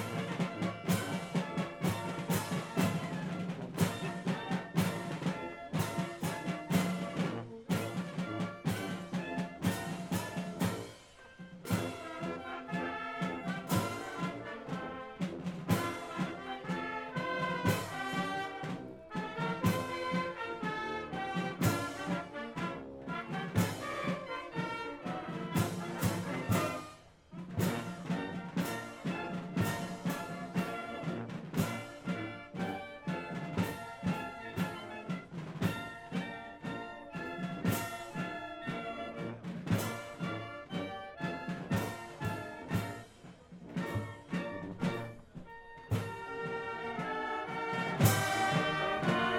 Pl. Jean Jaurès, Saint-Étienne, France - Procession Ste-Barbe - 2018
St-Etienne - de la cathédrale St-Charles Borromée au Musée de la mine - Procession de la Ste-Barbe
ZOOM H6